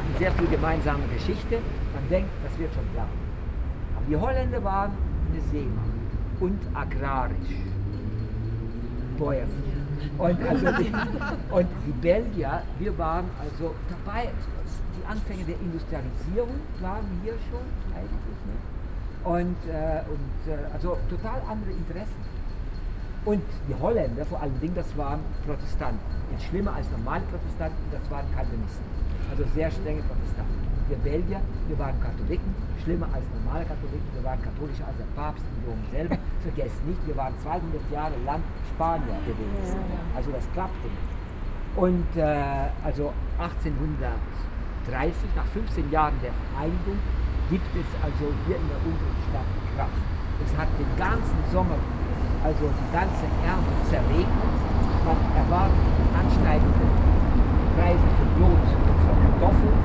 Excerpts from a nightly walk through Brussels with Stephaan; a bit of out-door tourism during a study trip on EU migration-/control policy with Iris and Nadine of v.f.h.
Place Sainte-Gudule, Bruxelles, Belgium, 17 October 2017, ~20:00